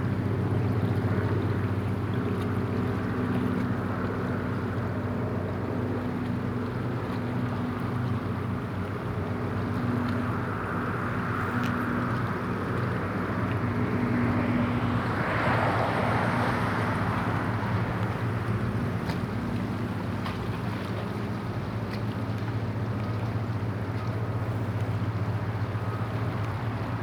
north beach, staten island
waves, cars, boats, planes